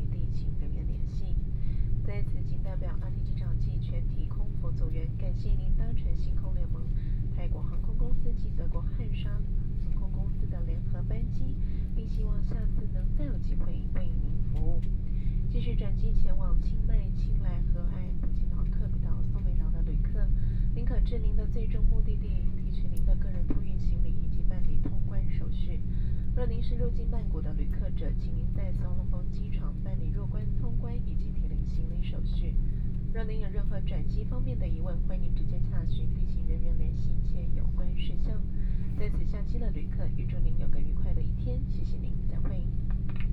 素萬那普機場, Bangkok - Broadcast
Broadcast cabin after the plane landed